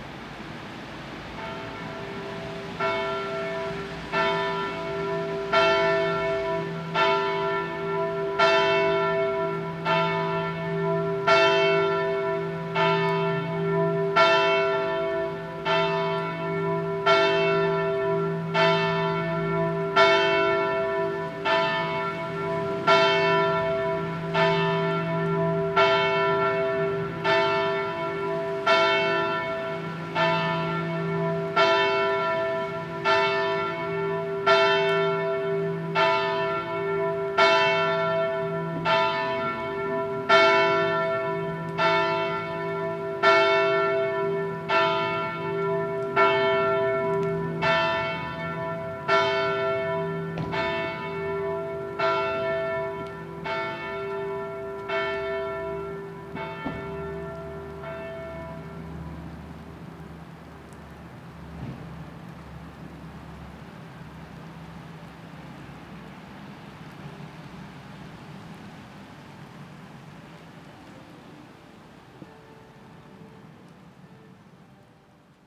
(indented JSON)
{
  "title": "Cathédrale Saints Pierre, Paul et Quirin, Malmedy, Belgique - Bells - cloches",
  "date": "2022-01-03 19:00:00",
  "description": "There was a sound and light system on the facade of the cathedral so I walked behind to avoid the amplified music, and I stopped walking when the bells started to ring, I was enough far away from the music.\nTech Note : Sony PCM-D100 internal microphones, wide position.",
  "latitude": "50.43",
  "longitude": "6.03",
  "timezone": "Europe/Luxembourg"
}